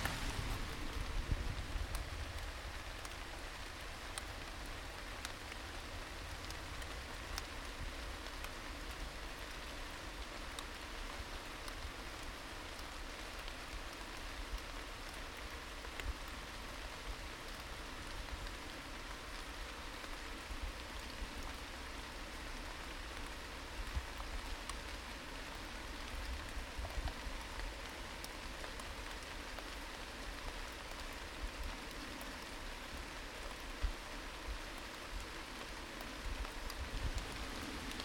Walking Festival of Sound
13 October 2019
Beech Trees, rain. Walking underneath trees. Inside a hollow tree. aeroplane overhead.
North East England, England, United Kingdom, 13 October 2019, 15:20